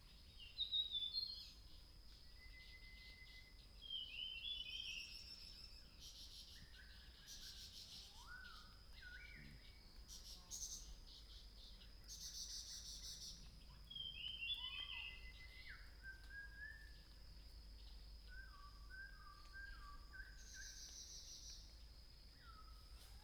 Hualong Ln., Yuchi Township, Nantou County - Birds singing
Birds singing, in the woods, dog